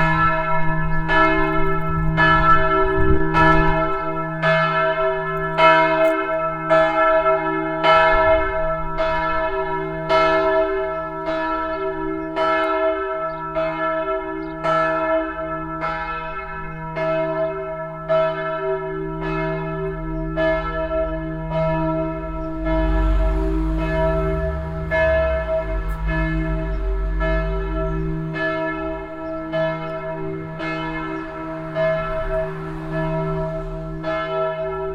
troisvierges, church, bells
The church bells of Troisvierges. Here a recording of the 6pm bell play.
Troisvierges, Kirche, Glocken
Die Kirchenglocken von Troisvierges. Hier das Glockenspiel von 6 Uhr abends.
Troisvierges, église, cloches
Les cloches de l’église de Troisvierges. Voici l’enregistrement du carillon de 18h00.
Projekt - Klangraum Our - topographic field recordings, sound objects and social ambiences